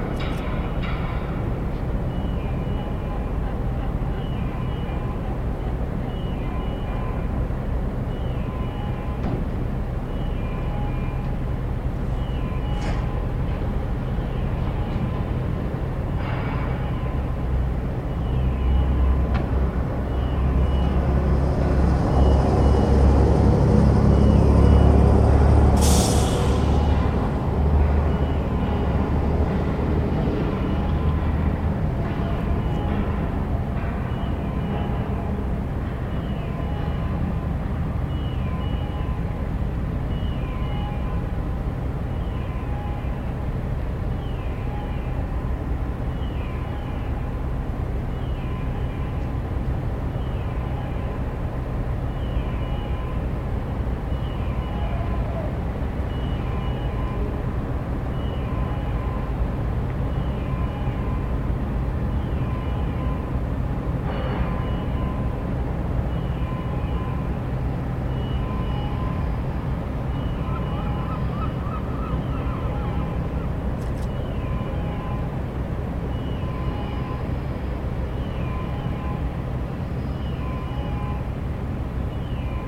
Dunkerque Port Socarenam - DK Port Socarenam
Repair dock at Dunkerque harbour on Christmas eve 2008. Zoom H2.
16 April 2009, 00:48